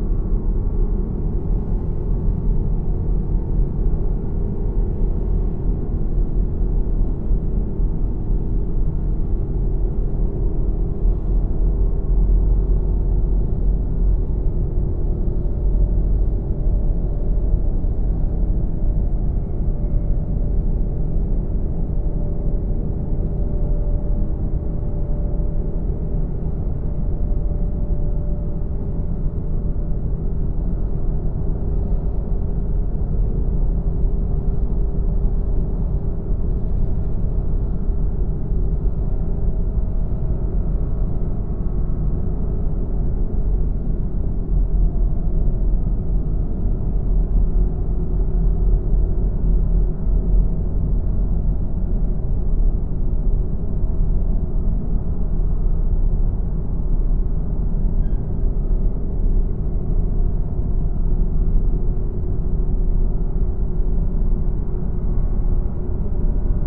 Le Port, Le Havre, France - The enormous lock
The François Premier bridge is an enormous lock. The moving part weights 3300 tons. Here during the recording, a gigantic boat is passing by, the lock is open. It's the Grande Anversa from Grimaldi Lines, which weights 38.000 tons.